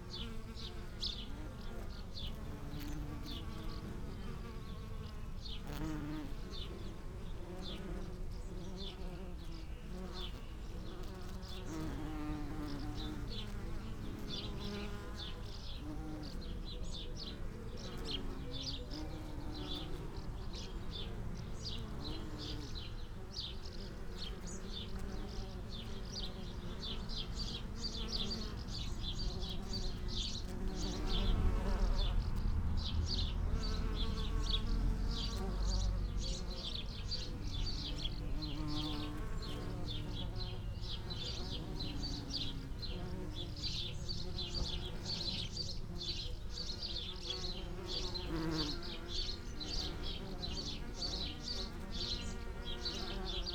Chapel Fields, Helperthorpe, Malton, UK - bees on lavender ...
bees on lavender ... SASS between two lavender bushes ... bird song ... calls ... from ... starling ... song thrush ... house sparrow ... blackbird ... house martin ... collared dove ... background noise ... traffic ...